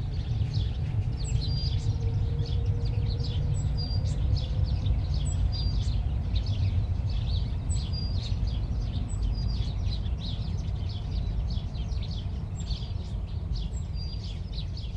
Das Nasse Dreieck (The Wet Triangle), wildlife and the distant city in a secluded green space, once part of the Berlin Wall, Berlin, Germany - Magpies very close and intricate twitterings
Unattended (by myself) microphones allow others to come very close at times. In this case the magpie must be in the next tree. This recording has no melodic song birds but is a rhythmic texture of chirps, tweets, twitters, caws and clacks - sparrows, greenfinches, crows, great & blue tits. Trains pass.
Deutschland, 10 March, 13:12